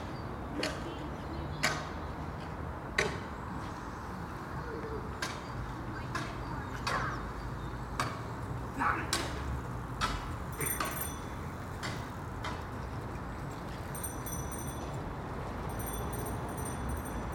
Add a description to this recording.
The Drive Westfield Drive Oakfield Road Kenton Road Elmfield Road, The road bounded, by utility services roadworks, traffic stops and starts, A boy on his bike, pedalling in his superhero wellies, A man walks with two poles, a woman runs by